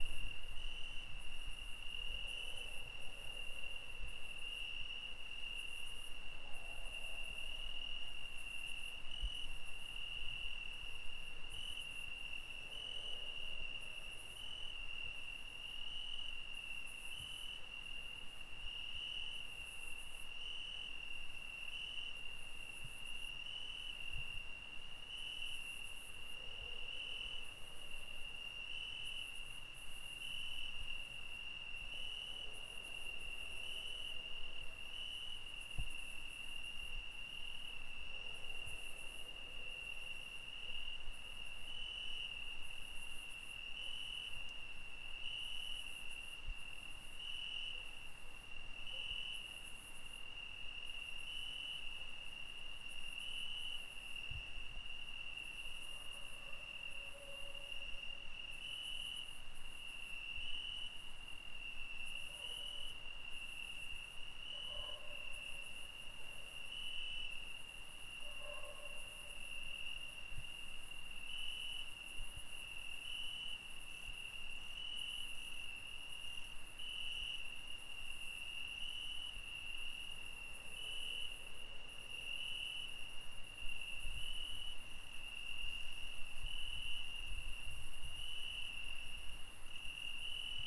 Gabrovtsi, Veliko Tarnovo, Bulgarien - Nature Night Concert
Cigales (and other insects?) in the village of Gabrovtsi.